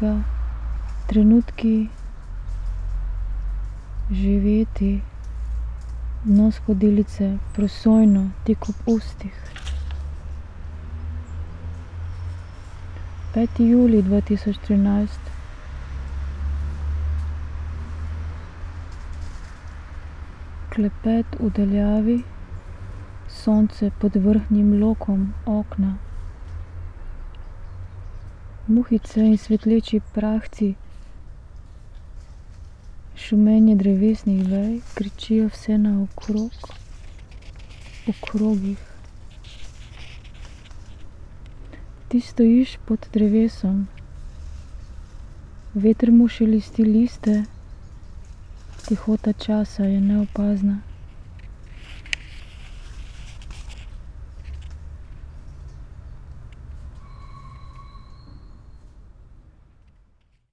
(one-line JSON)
{"title": "poems garden, Via Pasquale Besenghi, Trieste, Italy - reading poem", "date": "2013-09-07 15:29:00", "description": "6. julij 2013\noblačno jutro sobote\nodtenki hladne modrine\nkriči lastovic kričijo ... prihajajočo melanholijo\nmolk se je prepognil v molčanje\n8. julija 2013\nna obali kamnitih zrn\nvalovi jih s penastimi vršički nežno prestavljajo\nzrnca peska\ntrenutki\nživeti\ndno skodelice, prosojno, tik ob ustih\n5. julij 2013\nklepet v daljavi\nsonce pod vrhnjim lokom okna\nmuhice in svetleči prahci\nšumenje drevesnih vej, kričijo vse naokrog\nv krogih\nti stojiš pod drevesom\nveter mu šelesti liste\ntihota časa je neopazna\nreading fragments of poems", "latitude": "45.64", "longitude": "13.77", "altitude": "74", "timezone": "Europe/Rome"}